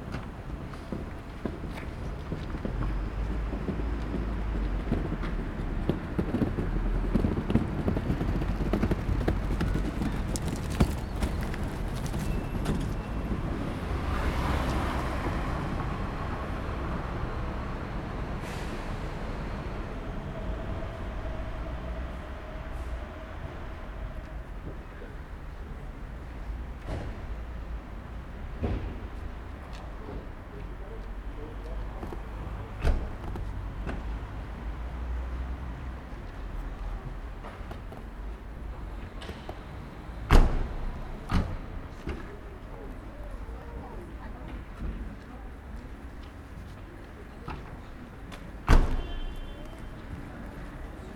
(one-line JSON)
{"title": "Gohlis-Süd, Leipzig, Deutschland - gate of premises of neue musik leipzig", "date": "2016-09-21 15:15:00", "description": "The recording was made within the framework of a workshop about sound ecology of the class for sound art at Neue Musik Leipzig. Passing by cars, bycicles, people. A sack barrow, steps. Neue Musik Leipzig - Studio für Digitale Klanggestaltung.", "latitude": "51.36", "longitude": "12.36", "altitude": "111", "timezone": "Europe/Berlin"}